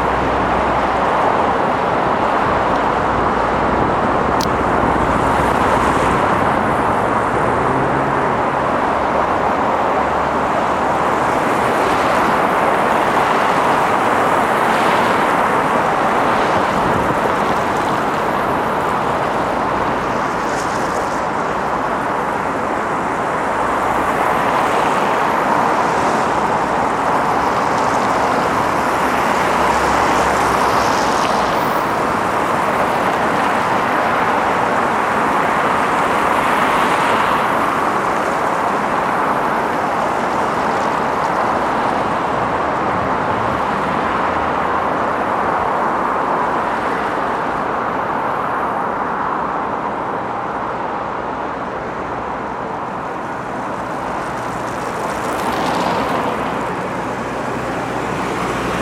Ленинский пр-т., Москва, Россия - Near Leninsky Prospekt
Near Leninsky Prospekt. I recorded what was happening around me. Mostly you can hear the sound of passing cars. The evening of January 27, 2020. The sound was recorded on a voice recorder.
Москва, Центральный федеральный округ, Россия, 27 January